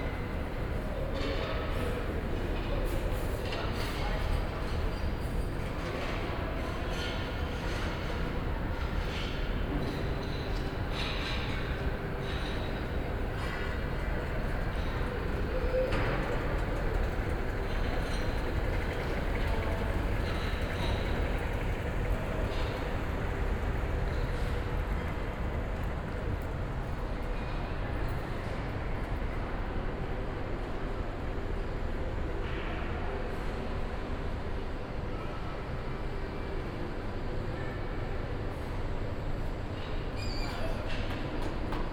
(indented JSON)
{
  "title": "cologne, mediapark, cinedom, foyer - cinedom, move up",
  "date": "2010-10-10 18:00:00",
  "description": "move from ground level to 3rd floor by elevators and escalators.\n(binaural, use headphones!)",
  "latitude": "50.95",
  "longitude": "6.94",
  "altitude": "58",
  "timezone": "Europe/Berlin"
}